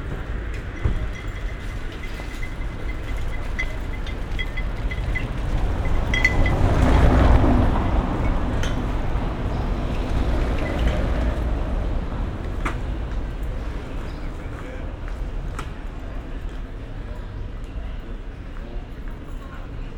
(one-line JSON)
{"title": "berlin: friedelstraße - the city, the country & me: late afternoon ambience", "date": "2013-07-05 18:42:00", "description": "cars, cyclists, tourists\nthe city, the country & me: july 7, 2013", "latitude": "52.49", "longitude": "13.43", "altitude": "46", "timezone": "Europe/Berlin"}